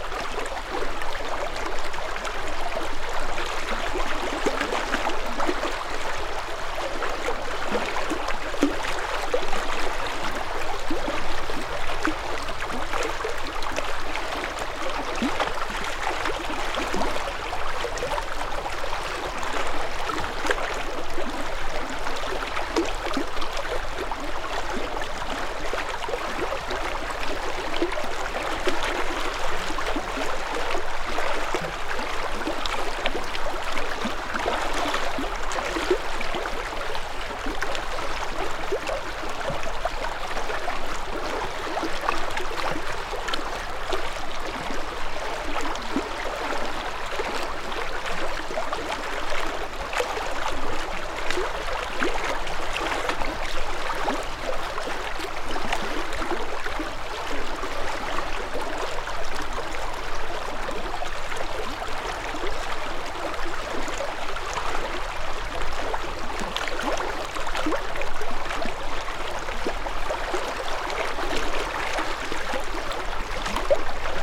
(Bothnian) Sea sounds on rocks.
5 May 2011, ~10:00